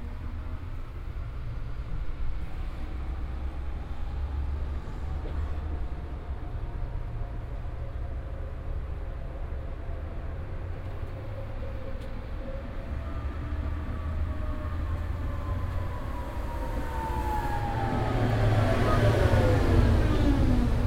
dresden, st.petersburgerstr, tram stop synagoge
tram arriving, passengers leaving, doorbell, departure
soundmap d: social ambiences/ in & outdoor topographic field recordings